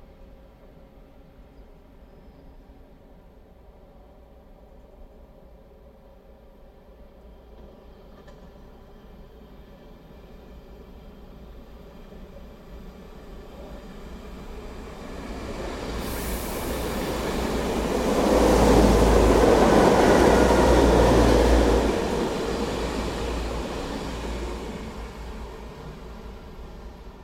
passing trains, Vienna
trains passing in central Vienna